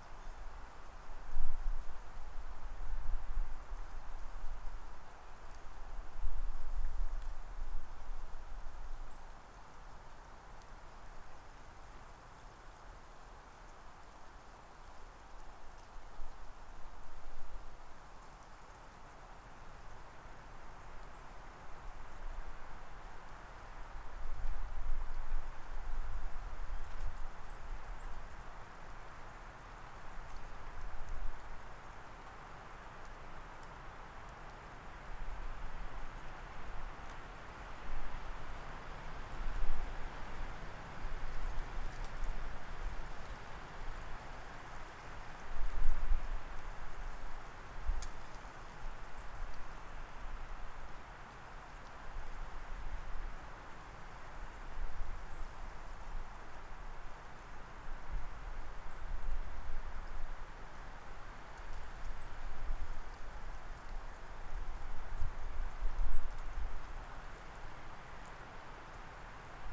Light snowfall adds to the pile already on the ground. Snowflakes and birds, followed by moving supplies between two vehicles and shovelling a path. Stereo mic (Audio-Technica, AT-822), recorded via Sony MD (MZ-NF810, pre-amp) and Tascam DR-60DmkII.